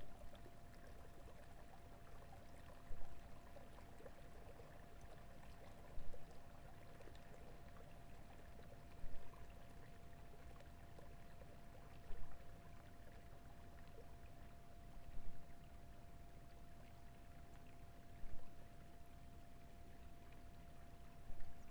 neoscenes: changing the course of nature